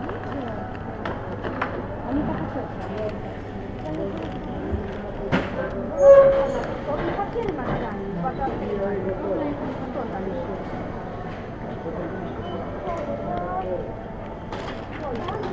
Centrum Handlowe Turzyn, Szczecin, Poland
Ambiance in supermarket.
29 September 2010